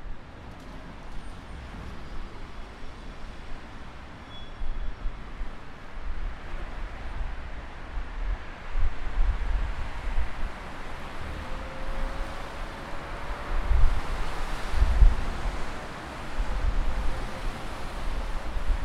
Coenhavenweg, Amsterdam, Nederland - Wasted Sound De Ring
The wasted sound of the Amsterdam ring road.
Noord-Holland, Nederland, 6 November 2019, ~13:00